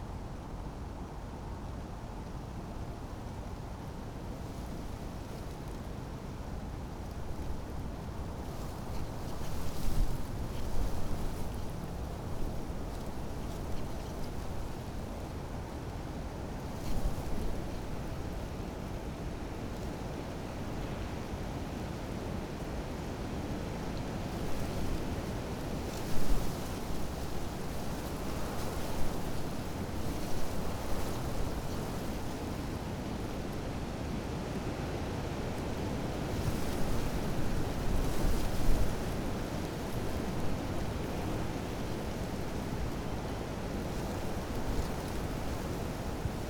Tempelhofer Feld, Berlin, Deutschland - wind in grassland
a cold wind from south-west in dry gras and a group of poplar trees
(PCM D50)
Berlin, Germany, 27 December 2013, 13:30